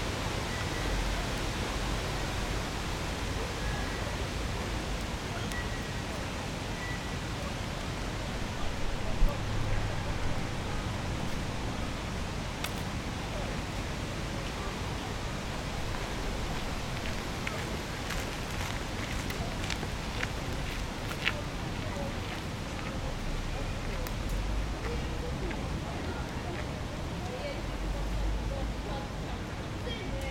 Östermalm, Stockholm, Suecia - environment park
Ambient sonor tranquil al parc.
Ambient sound quiet park.
Ambiente sonoro tranquilo en el parque.